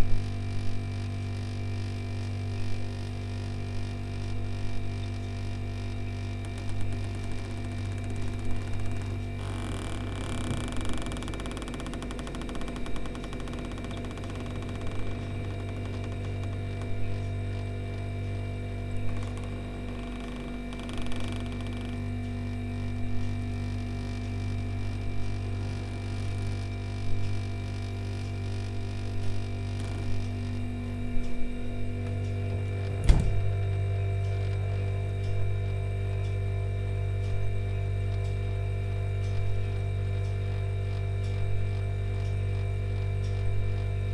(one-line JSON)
{"title": "Maribor, Slovenia, Slomškov trg - Refrigerator jam", "date": "2008-07-04 21:30:00", "description": "From the series of recordings of jamming with different ordinary objects - this one is \"playing\" a very loud old refrigerator - leaning it in different directions, opening it's doors, letting it sing on its own...", "latitude": "46.56", "longitude": "15.64", "altitude": "276", "timezone": "Europe/Ljubljana"}